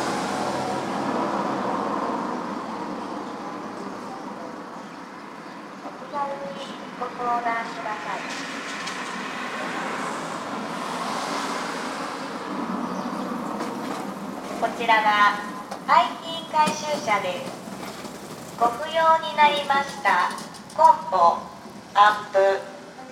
Recycling collection truck loudspeaker announcing items that the truck will pick up: bicycles, motocycles, etc.
Shiga, Ritto, Hayashi 県道11号線, 5 May 2014